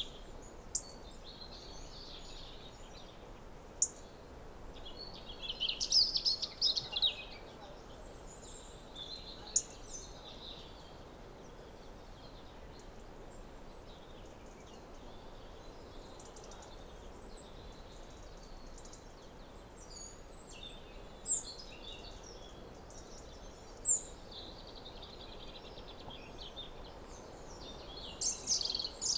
stromboli, ginostra - evening birds
evening ambience, autumn on stromboli
Lipari ME, Italy